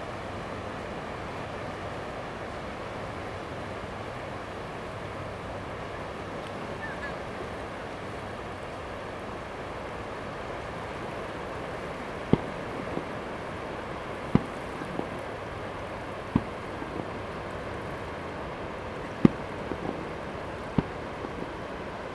白沙屯漁港, Tongxiao Township - On the beach of the fishing port
On the beach of the fishing port, Sound of the waves, Fireworks sound
Zoom H2n MS+XY
Miaoli County, Tongxiao Township